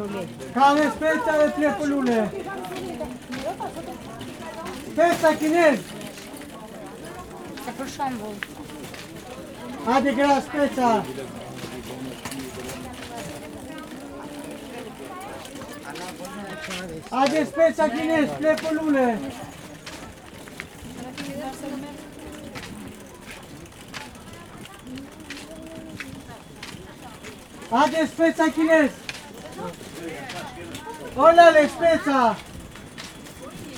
Bazar, Tirana, Albanien - Man selling plants at bazar
Following a man with a trolley who is trying to sell plants at the bazar. XY.